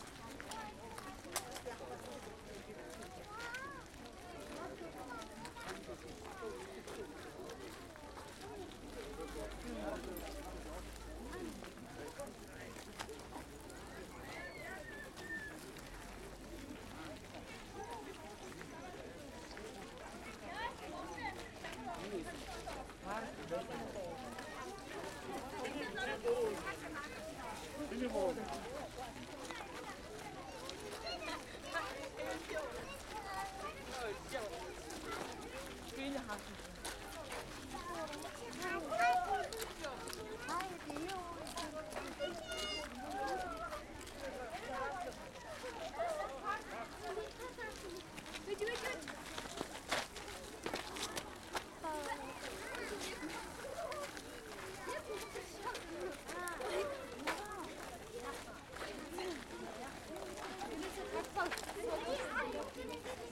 Khoroo, Ulaanbaatar, Mongolei - pedestrian road
there are beyond the loud streets roads that are only used by pedestrians, mostly through resident areas
Border Ulan Bator - Töv, Монгол улс